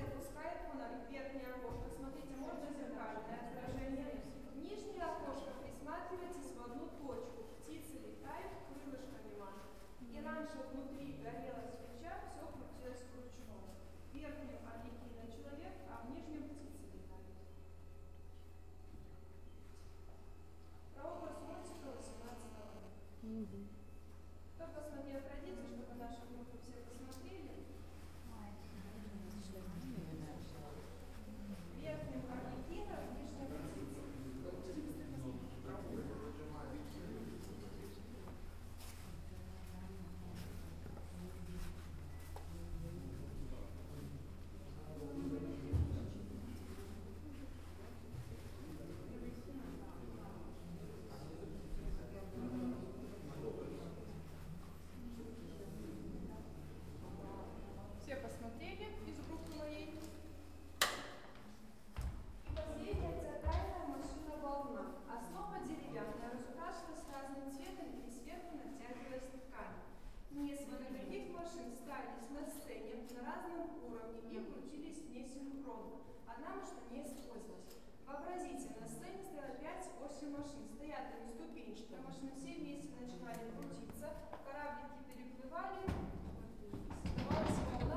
at the theatre exposition hall
Niaśviž, Belarus